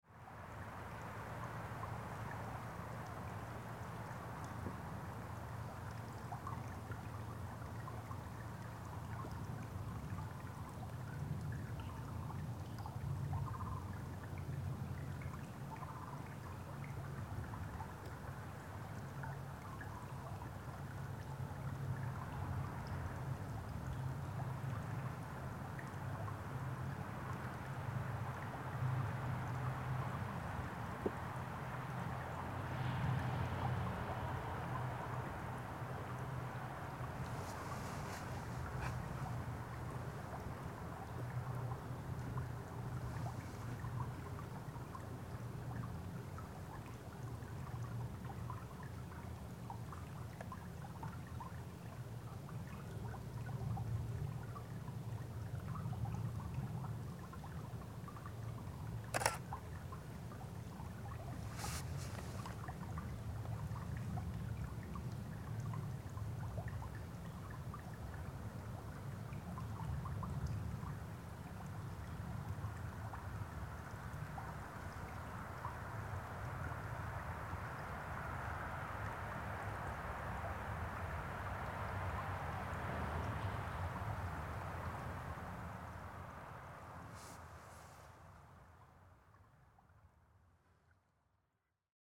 Jackson Marsh State Wildlife Area, Wisconsin, USA - Jackson Marsh - Site of July 2012 fuel pipeline leak
Gurgling creek beneath massive temporary timber roadway, constructed atop frozen marsh. Pipeline leak repair to start this week. The site still smelled of gasoline, of which 54,600 gallons spilled in July 2012. Buckeye LLP owns this line, which runs from East Chicago, Indiana, to Milwaukee and Green Bay. Pipeline contains gasoline, jet fuel, and diesel lines.
Wisconsin, United States of America